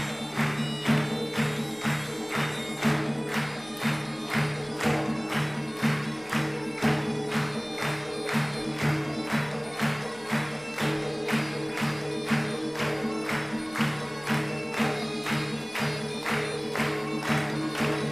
Bistrampolis, Lithuania, Chveneburebi
Gergian vocal ensemble Chveneburebi